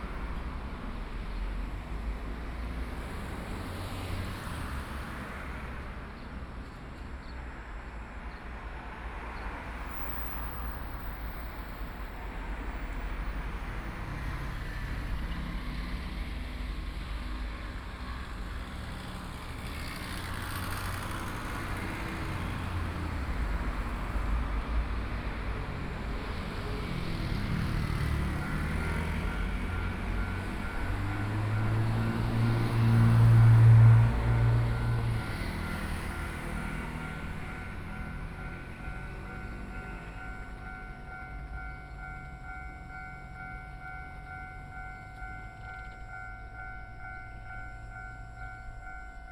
Xuejin Rd., Wujie Township - At railroad crossing
In front of the railroad crossing, Traffic Sound
Sony PCM D50+ Soundman OKM II